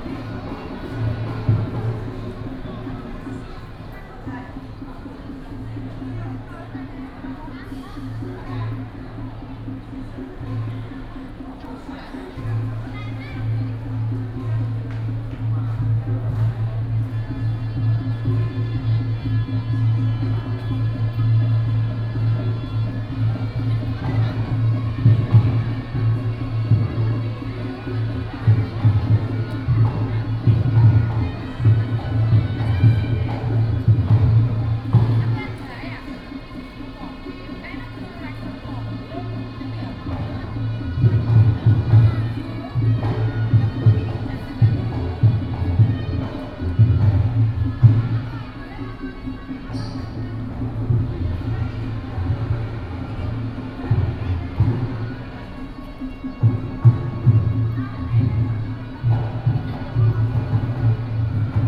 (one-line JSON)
{"title": "New Taipei City Government, Taiwan - Walking in the City Hall lobby", "date": "2015-09-20 11:07:00", "description": "Walking in the City Hall lobby", "latitude": "25.01", "longitude": "121.47", "altitude": "22", "timezone": "Asia/Taipei"}